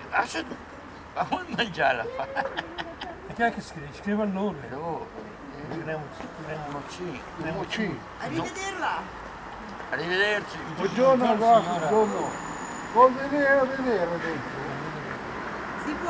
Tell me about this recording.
people talk quitely on a bench. at the end the old guardian invites to visit the Castle